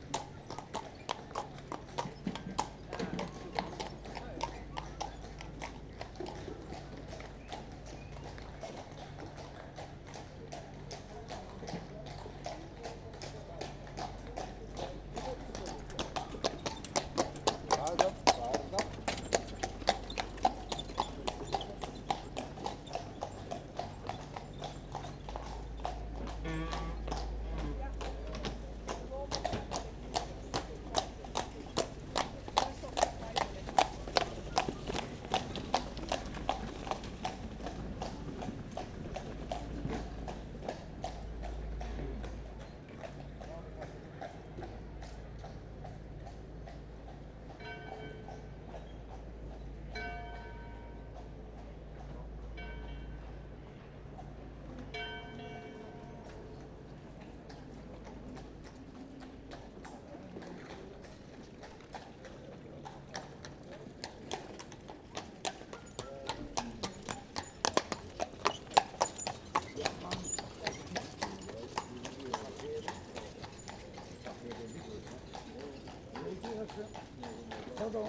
Brugge, België - Horses in the city
Wijngaardplein. Bruges can be visited by horse-drawn carriage. Horses walk tourists for a plump price. The city of Bruges is totally inseparable from the sound of hooves on the cobblestones. Streets in the city center are flooded with these journeys, a real horses ballet, immediately near the Beguinage.